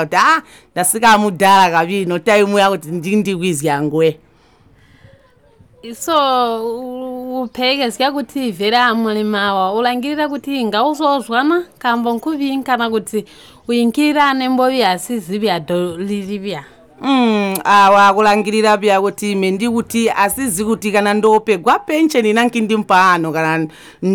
On Zubo fishing rig, Binga harbour, Zimbabwe - Bbindawuko Banakazi audio self-empowerment
the fishing women swop the mic and continue recording...
“Zubo Trust brings women together for self-empowerment”.